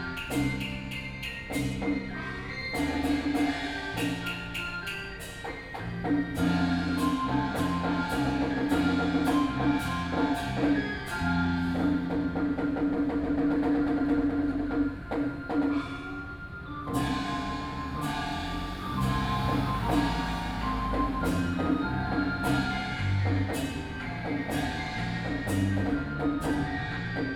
Fuxinggang Station, Taipei - Traditional Ceremony
In the MRT exit, Traditional Taiwanese opera ceremony is being held, Binaural recordings, Zoom H6+ Soundman OKM II
Taipei City, Taiwan, 15 November 2013